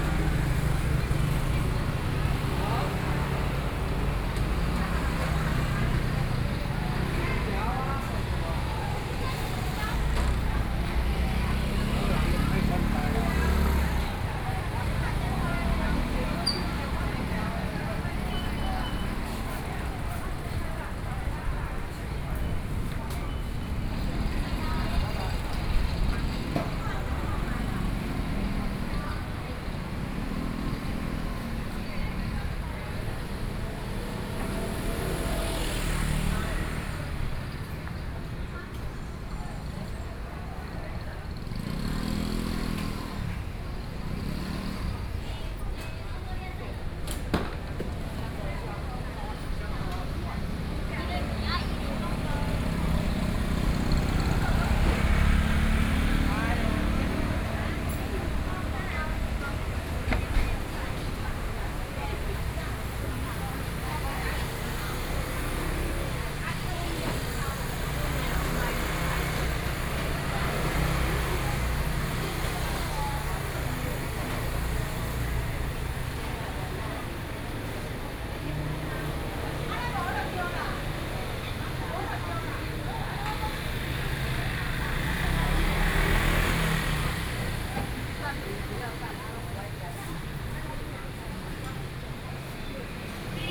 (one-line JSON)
{"title": "Heping Rd., 羅東鎮仁和里 - Walking in the traditional market", "date": "2014-07-27 10:33:00", "description": "Walking through the traditional market, Traffic Sound\nSony PCM D50+ Soundman OKM II", "latitude": "24.67", "longitude": "121.77", "altitude": "14", "timezone": "Asia/Taipei"}